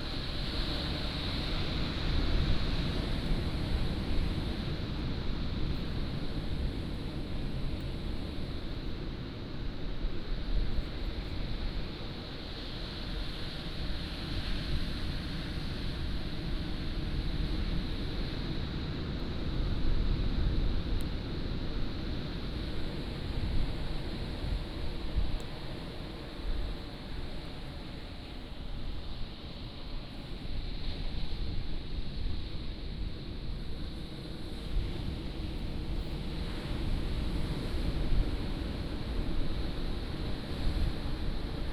坂里村, Beigan Township - Sound of the waves
In a small temple square, Sound of the waves
福建省 (Fujian), Mainland - Taiwan Border, 13 October 2014, 13:28